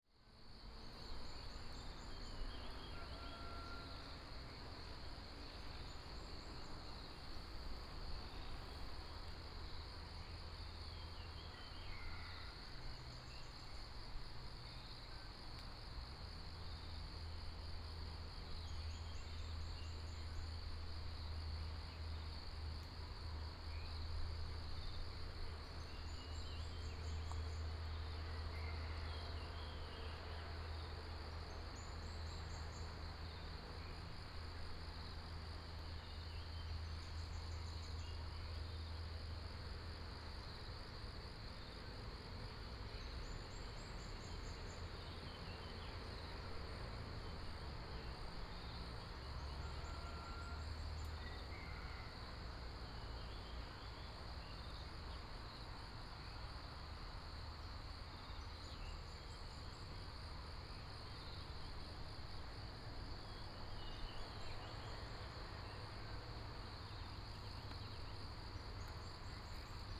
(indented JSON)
{
  "title": "水上, 埔里鎮桃米里, Taiwan - In the woods",
  "date": "2016-04-19 06:08:00",
  "description": "In the woods, Traffic Sound, Bird sounds, Crowing sounds",
  "latitude": "23.94",
  "longitude": "120.92",
  "altitude": "542",
  "timezone": "Asia/Taipei"
}